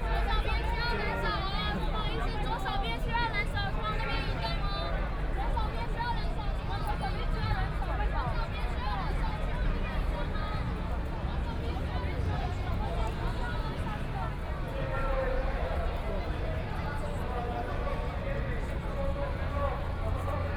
Zhongxiao E. Rd., Taipei City - Occupied Executive Yuan
Student activism, Walking through the site in protest, People and students occupied the Executive Yuan